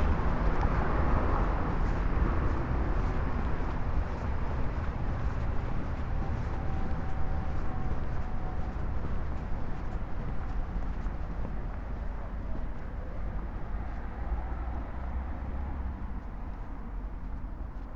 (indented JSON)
{
  "title": "Quai du Point du Jour, Paris, France - In front of TF1",
  "date": "2016-09-22 17:30:00",
  "description": "In front of the worst TV of the world ; a pilgrimage for us ! Enormous traffic noises.",
  "latitude": "48.83",
  "longitude": "2.26",
  "altitude": "30",
  "timezone": "Europe/Paris"
}